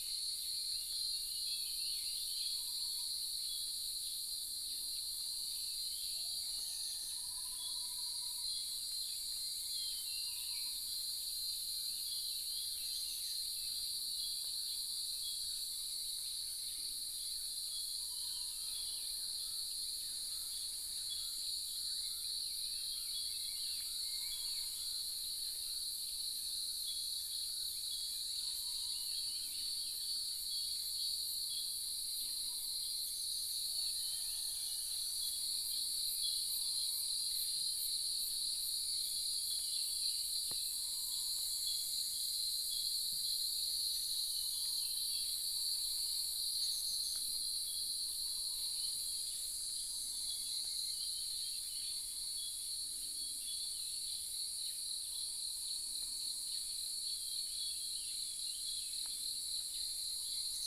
種瓜路.草楠, 桃米里 - early morning
in the wetlands, Bird sounds, Insects sounds, Cicada sounds